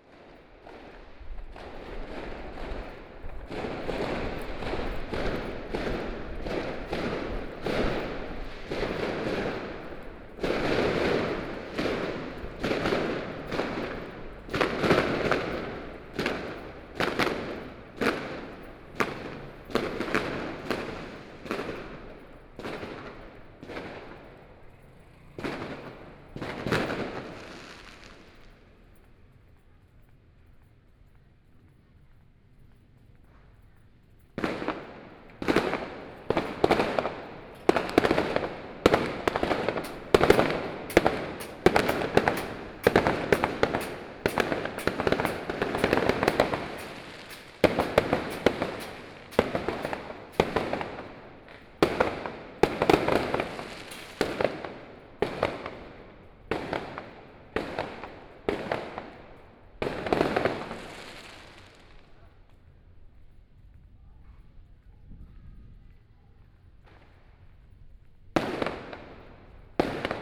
Ln., Xinxing Rd., Beitou Dist., Taipei City - Firework
Firework, Binaural recordings, Sony PCM D50 + Soundman OKM II
Taipei City, Taiwan, 20 October 2013